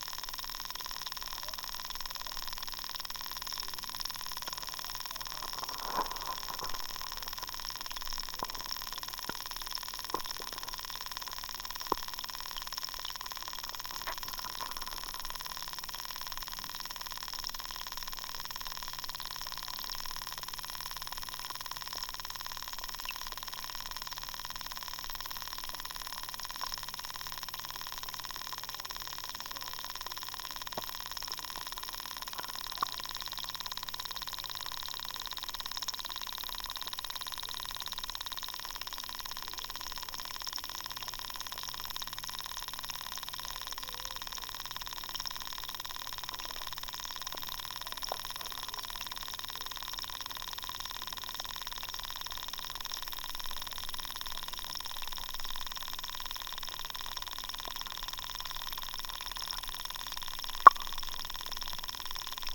Suvernai, Lithuania, underwater
Underwater activity. Over water kids are heard as well:)
Klaipėdos apskritis, Lietuva, July 2022